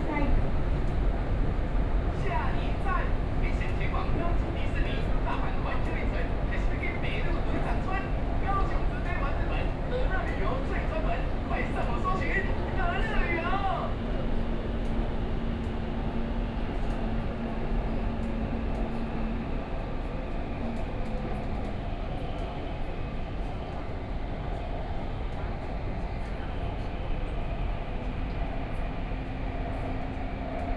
左營區祥和里, Kaohsiung City - in the MRT
Kaohsiung Mass Rapid Transit, from World Games station to Zuoying